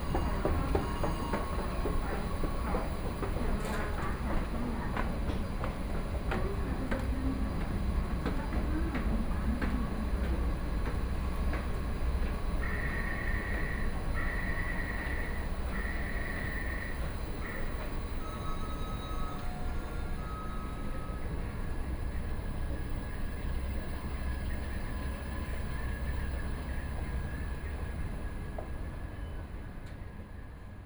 Sanchong District, New Taipei City - Xinzhuang Line (Taipei Metro)
from Sanchong Station to Touqianzhuang Station, Sony PCM D50 + Soundman OKM II